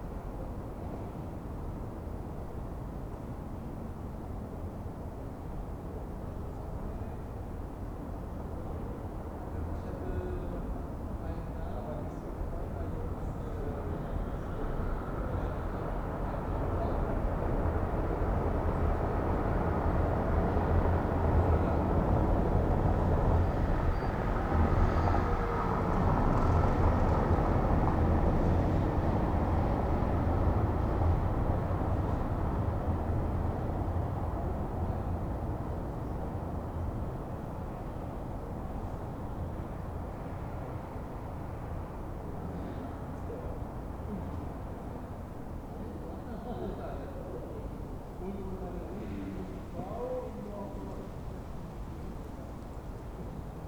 Berlin: Vermessungspunkt Friedel- / Pflügerstraße - Klangvermessung Kreuzkölln ::: 29.05.2011 ::: 00:14
Berlin, Germany, 29 May